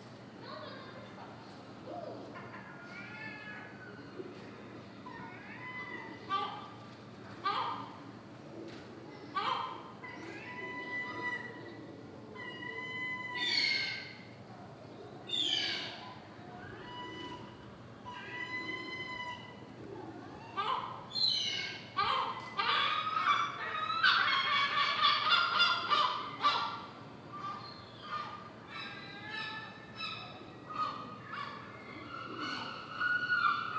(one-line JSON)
{"title": "Les Sables-d'Olonne, France - Seagulls nest on the church", "date": "2016-06-20 17:12:00", "description": "Ici les goelands ont élu domicile sur l'église.\nPrise de son depuis la rue, quelques passants.\nThere was some seagulls nest above the church, recorded by the street, some peoples.\n/zoom h4n intern xy mic", "latitude": "46.50", "longitude": "-1.79", "altitude": "11", "timezone": "GMT+1"}